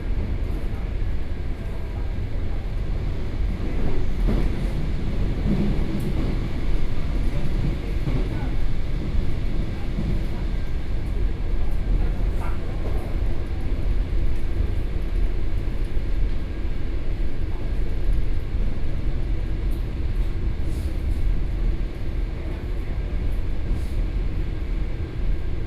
Songshan District, Taipei City - On the train